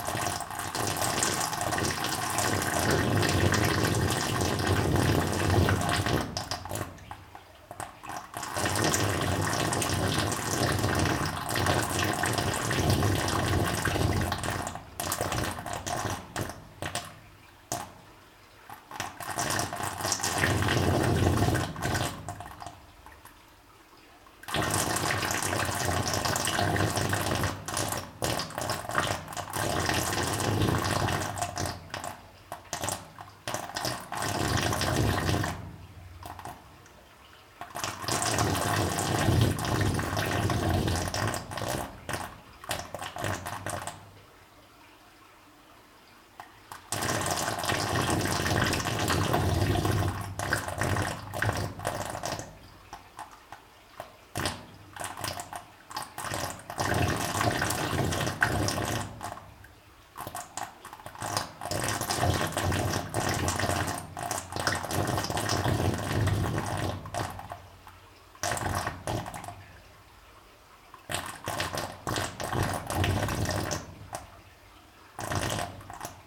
Volmerange-les-Mines, France - The whoopee pipe 2
The same sound as the whoopee pipe, but made with a binaural microphone. This pipe has a very big illness and should consult a doctor !